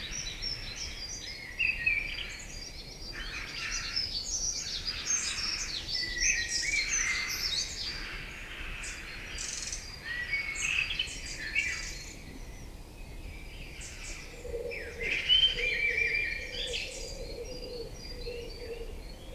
Mont-Saint-Guibert, Belgique - Peaceful morning
Early in the morning, a peaceful day begins in Belgium. Birds are singing in the nearby forest.
Mont-Saint-Guibert, Belgium, 19 March 2016